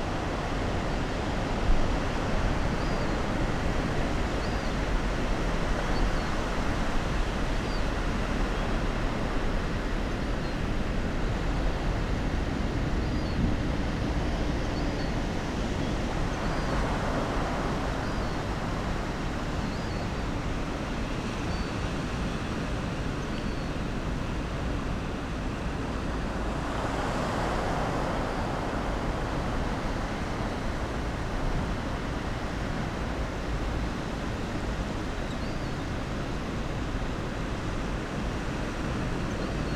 Scarborough Lifeboat, Foreshore Rd, Scarborough, UK - Falling tide ...
Falling tide ... on the slip way of the RNLI station ... lavalier mics clipped to bag ...
England, United Kingdom